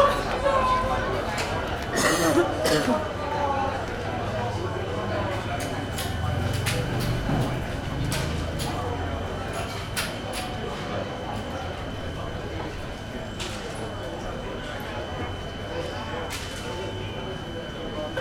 a narrow, back street with small cafes, locals sitting at tables, talking and playing backgammon. recorded close to a broken, noisy intercom.
Heraklion, Greece